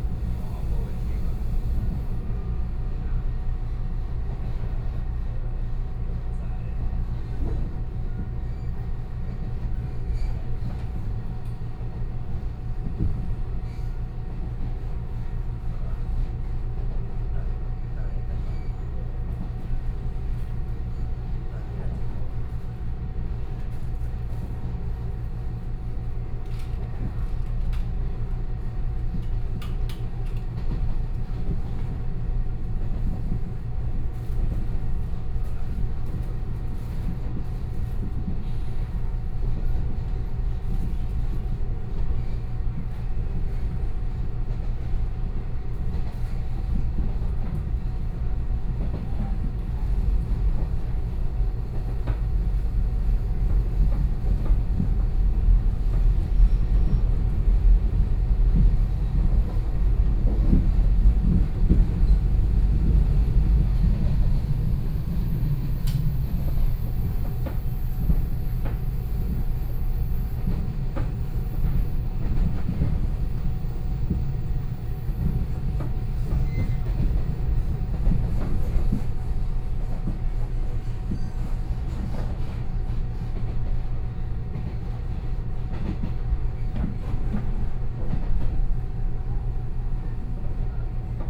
From Kaohsiung Station to Zuoying Station, This route will change in the future as the Mass Transit Railway
Kaohsiung City, Taiwan